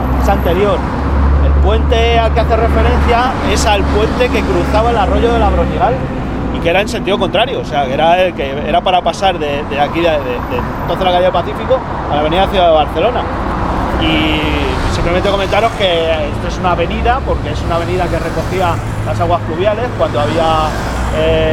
Adelfas, Madrid, Madrid, Spain - Pacífico Puente Abierto - Transecto - 03 - Calle Seco con Avda. Ciudad de Barcelona
Pacífico Puente Abierto - Transecto - Calle Seco con Avda. Ciudad de Barcelona
7 April, 19:00